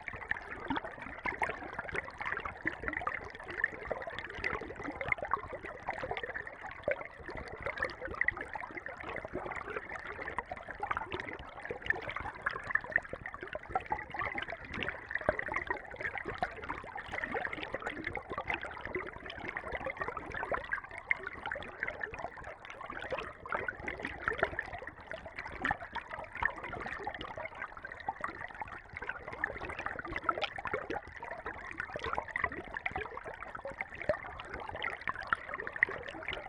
{"title": "River Urslau, Hinterthal, Austria - River Urslau (hydrophone recording)", "date": "2015-07-21 14:00:00", "description": "Stereo hydrophones in the lovely clear, cold, shallow River Urslau. The very next day this was a muddy torrent after storms in the mountains. Recorded with JrF hydrophones and Tascam DR-680mkII recorder.", "latitude": "47.41", "longitude": "12.97", "altitude": "998", "timezone": "Europe/Vienna"}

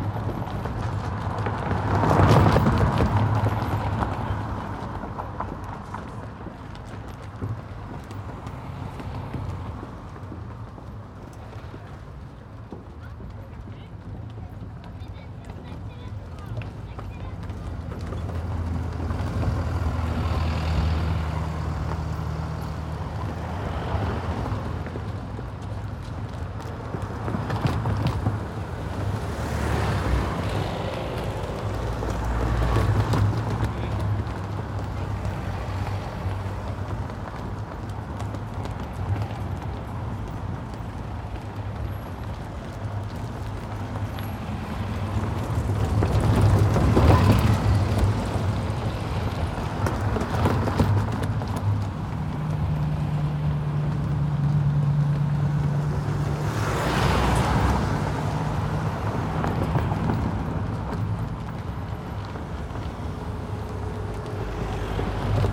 {"title": "Hammersmith Bridge, London - Hammersmith Bridge in London", "date": "2018-04-14 16:10:00", "description": "Interesting sounds that resemble horse clapping. Hammersmith Bridge has a very old surface made of metal slabs covered with a thin layer of tarmac. SONY PCM D100. Little EQ (HPF below 40hz) to cut the wind noise.", "latitude": "51.49", "longitude": "-0.23", "altitude": "5", "timezone": "Europe/London"}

